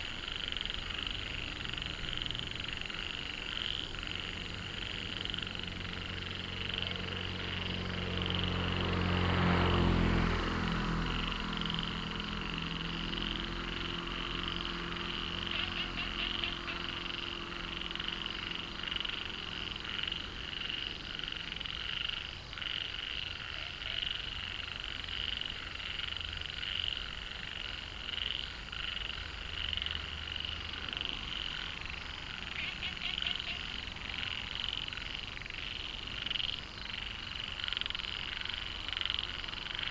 next to the bridge, Frogs chirping, Flow sound, Traffic Sound
Puli Township, 桃米巷48號